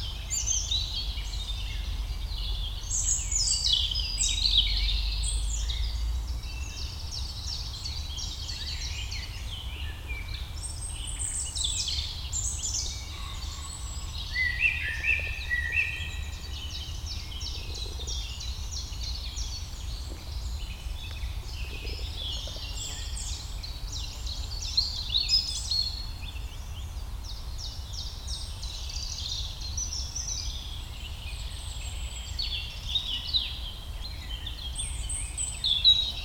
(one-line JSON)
{"title": "Court-St.-Étienne, Belgique - Forest and birds", "date": "2018-04-18 07:50:00", "description": "It's very uncommon to have a short time without planes and I took advantage to record the forest during this short period. The masters of the woods : Robin, Common Pheasant, Eurasian Blackcap, Wood Pigeon, Blackbird, Common Chiffchaff. Discreet : Eurasian Wren, Great Spotted Woodpecker (5:50 mn), Western Jackdaw, one human and a dog, plump mosquito on the microphone.", "latitude": "50.62", "longitude": "4.57", "altitude": "129", "timezone": "Europe/Brussels"}